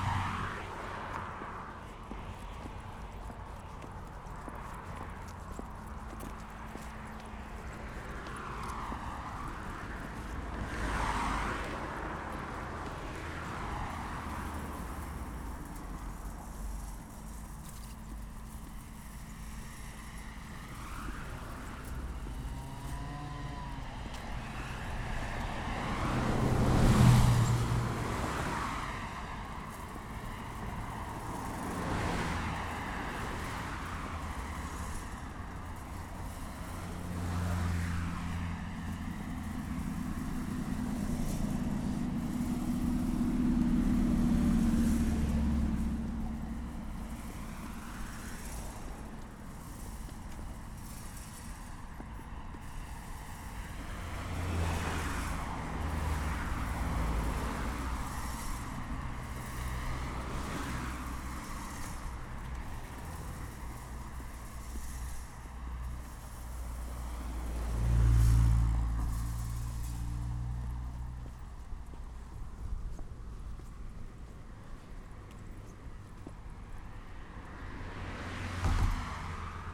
{"title": "Puente Andalucía, wire mesh", "date": "2010-11-21 16:15:00", "description": "Walking along this barrier, next to the road. Activating it with a small twig until it breaks and with the naked hand afterwards.", "latitude": "40.39", "longitude": "-3.70", "altitude": "576", "timezone": "Europe/Madrid"}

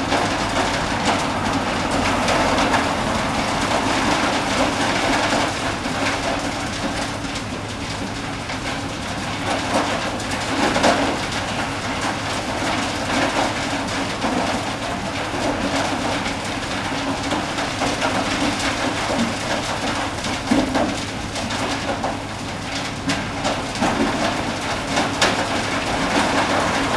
{"title": "Saint-Laurent-du-Pont, France - Cement crusher", "date": "2017-03-30 09:00:00", "description": "In the Perelle Vicat factory near the road, noise of a cement crusher. All cement is coming by trains from the underground mine.", "latitude": "45.37", "longitude": "5.75", "altitude": "484", "timezone": "Europe/Paris"}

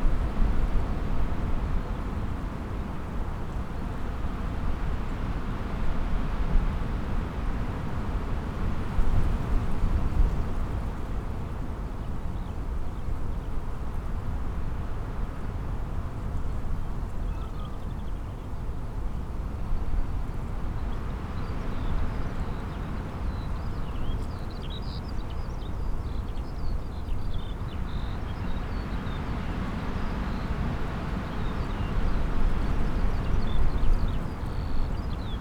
{"title": "Unnamed Road, Malton, UK - muck heap soundscape ...", "date": "2019-03-20 05:45:00", "description": "muck heap soundscape ... pre-amplified mics in SASS ... bird calls ... song ... pied wagtail ... skylark ... carrion crow ... chaffinch ... large muck heap in field waiting to be spread ...", "latitude": "54.14", "longitude": "-0.55", "altitude": "164", "timezone": "Europe/London"}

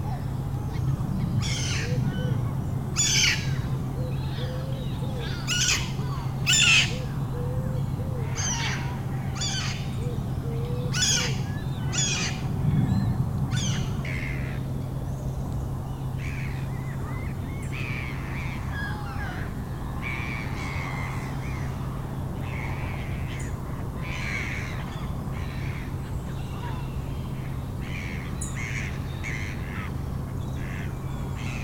{"title": "Léry, France - Seagulls", "date": "2016-09-20 06:30:00", "description": "Seagulls are discussing on the pond, early morning.", "latitude": "49.30", "longitude": "1.21", "altitude": "8", "timezone": "Europe/Paris"}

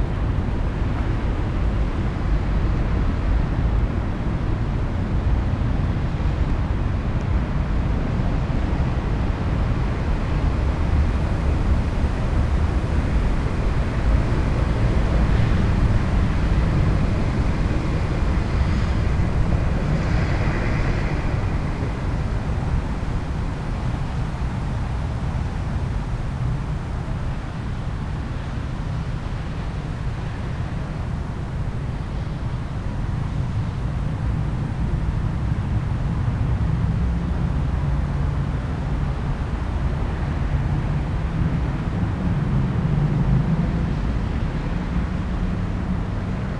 {"title": "berlin: tegeler straße - the city, the country & me: waste land", "date": "2013-08-31 16:51:00", "description": "traffic noise at a construction site wasteland\nthe city, the country & me: august 31, 2013", "latitude": "52.54", "longitude": "13.36", "altitude": "35", "timezone": "Europe/Berlin"}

